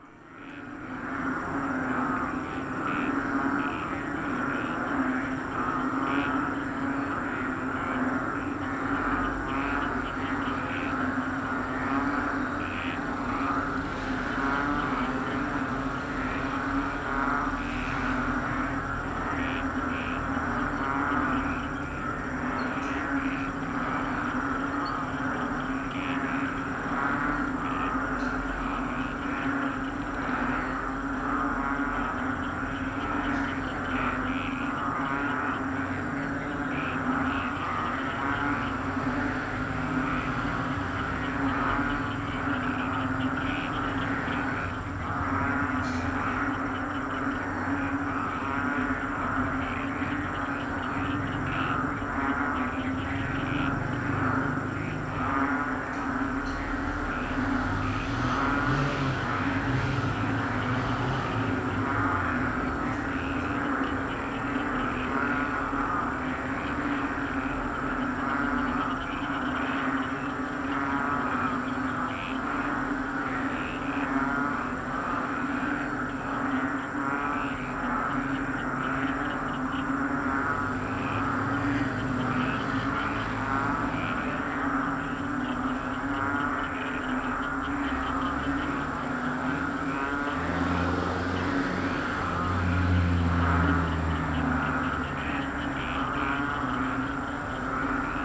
San Francisco, Biñan, Laguna, Filippinerna - Biñan Palakang Bukid #2
After som heavy rain in the evening before, there is full activity of the frogs in the field nearby still in the morning after! I belive several hundreds of them in chorus, accompanied by morning commuting on the nearby Halang Rd with tricycles, cars and motorcycles. Palakang bukid is the filipino name of this frog.